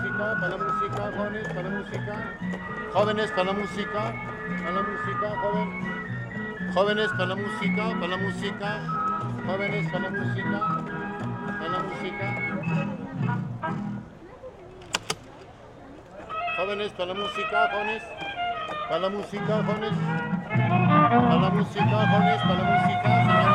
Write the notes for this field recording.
Puebla - Mexique, À l'entrée de 5 de Mayo il interpèle les passants avec un faux orgue de barbarie.